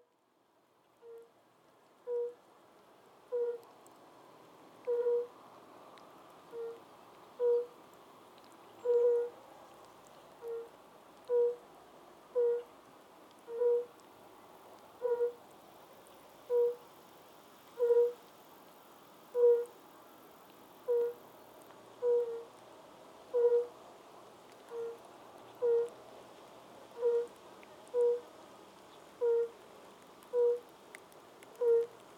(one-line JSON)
{"date": "2022-07-10 18:10:00", "description": "Pakalnės, Lithuania, European fire-bellied toads in small pond. Drizzling rain.", "latitude": "55.43", "longitude": "25.47", "altitude": "159", "timezone": "Europe/Vilnius"}